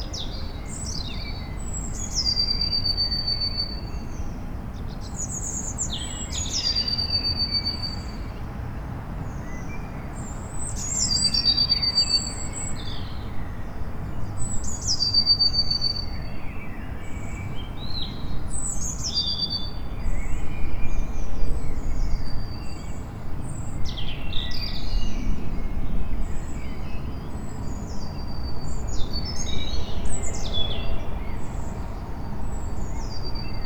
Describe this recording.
Early morning city noise. Bird songs and distant tramway. Bruit de fond citadin au petit matin. Chants d’oiseaux et tramway lointain.